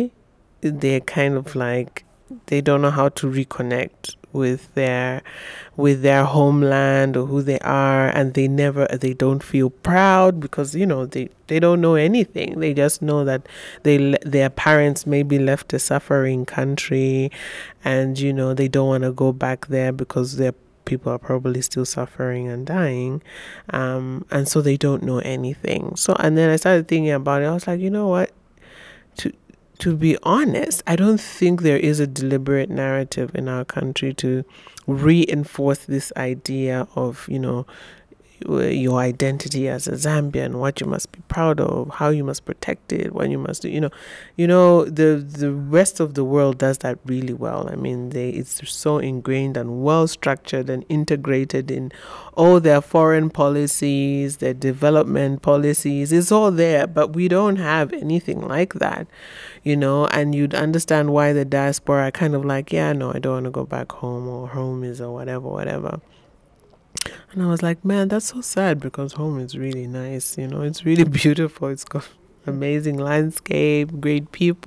… after interviewing the media consultant and journalist Samba Yonga on her role as co-founder of the Women’s history museum in June, I managed to catch up with Samba for a more extensive interview on her personal story; actually it was the very last day and even hours of my stay in Zambia… so here’s an excerpt from the middle of the interview with Samba, where she lets us share into her view back home from London and, her reflections and research about the Zambian and wider expat community abroad…
listen to the entire interview with Samba Yonga here:
Lusaka Province, Zambia, 12 December 2018, 11:50